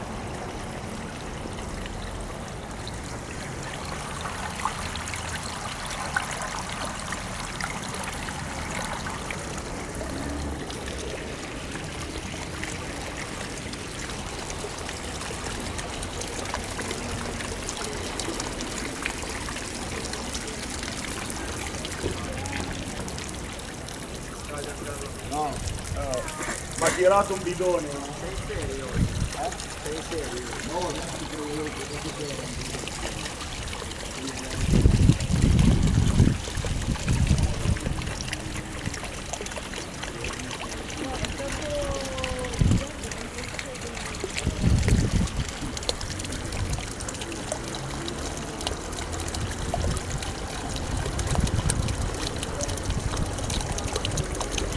Carpiano (MI), Church square, Italy - the fountain of the church square
water droppings by the fountain of the main square